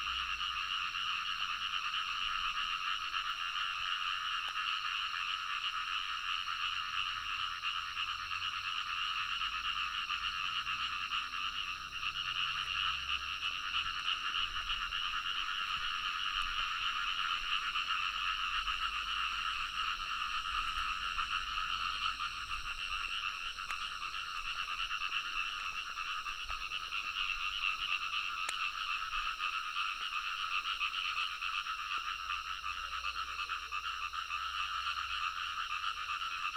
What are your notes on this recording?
Early morning, Bird calls, Croak sounds, Insects sounds, Frogs sound